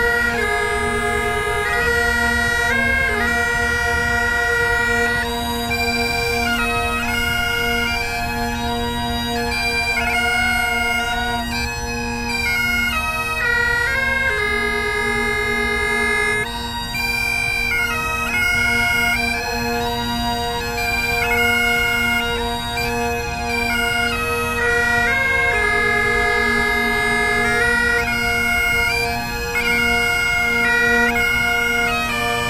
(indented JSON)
{
  "title": "Tempelhof, Berlin - bagpipe player practising",
  "date": "2013-08-11 16:00:00",
  "description": "3 bagpipe players practising on Tempelhofer Feld, surrounded by the noise of the nearby Autobahn.\n(Sony PCM D50, DPA4060)",
  "latitude": "52.47",
  "longitude": "13.40",
  "altitude": "50",
  "timezone": "Europe/Berlin"
}